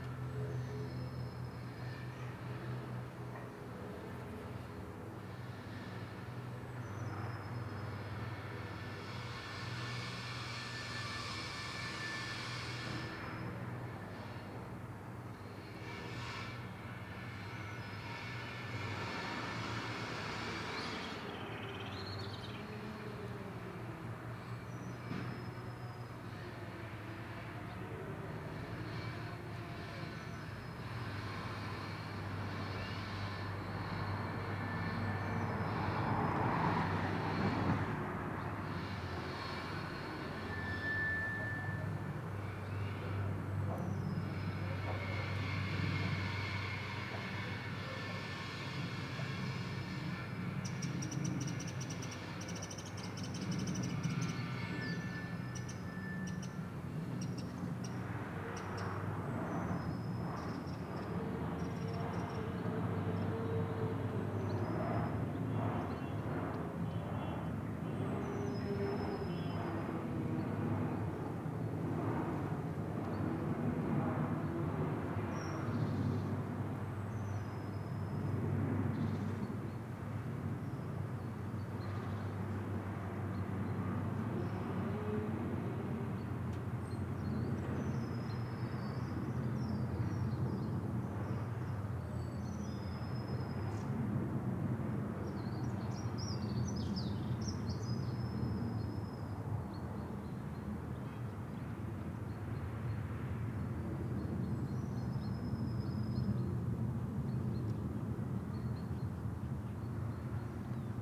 Contención Island Day 70 inner west - Walking to the sounds of Contención Island Day 70 Monday March 15th
The Drive Westfield Drive Parker Avenue Beechfield Road
Old bricks
dropping mortar
and crowned in ivy
The whine and growl of builders
ricochets around
hard to localise
In bright sun
passing walkers are well wrapped up
a chill wind blows